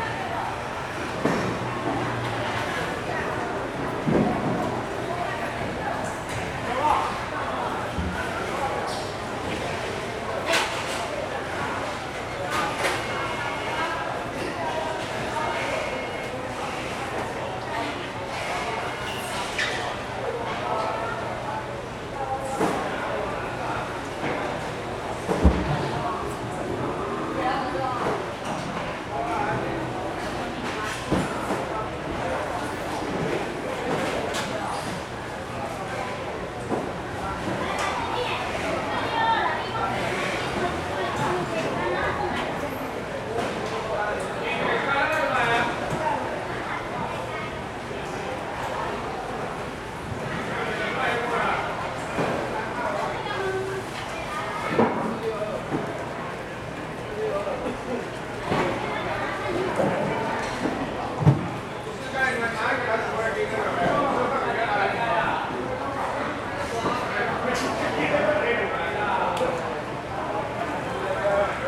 三重果菜市場, New Taipei City, Taiwan - Removal packing
Fruits and vegetables wholesale market, Removal packing
Sony Hi-MD MZ-RH1 +Sony ECM-MS907